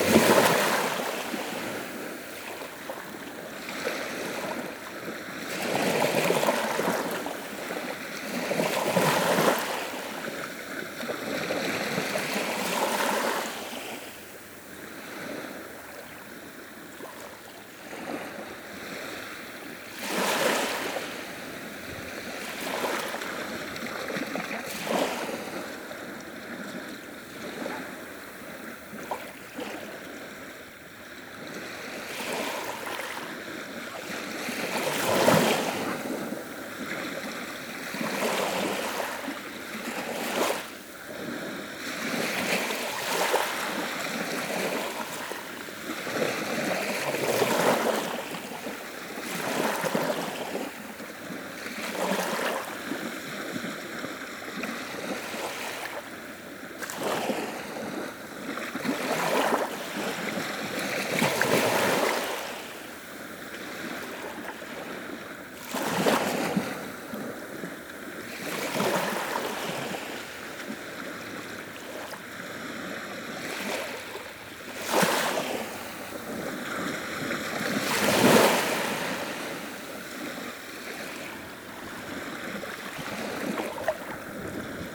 Cadzand, Nederlands - The sea
On the large Cadzand beach, quiet sound of the sea during the low tide.